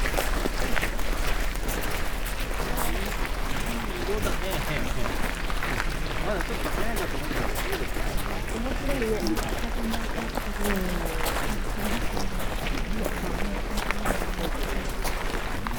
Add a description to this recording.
gravel path, steps, after rain